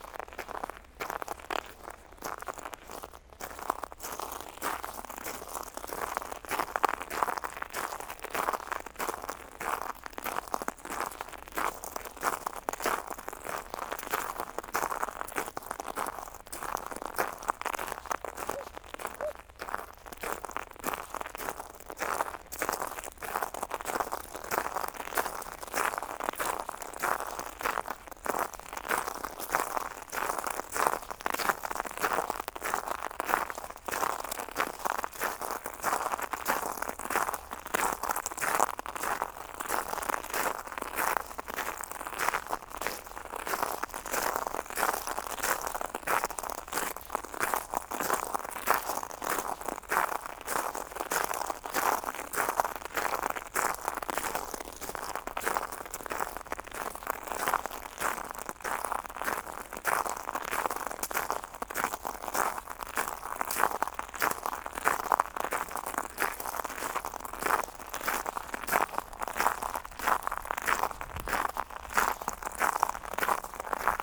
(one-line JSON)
{"title": "Cadzand, Nederlands - Walking on shells", "date": "2019-02-17 09:50:00", "description": "On the large Cadzand beach, walking on the shells during the low tide.", "latitude": "51.39", "longitude": "3.41", "timezone": "GMT+1"}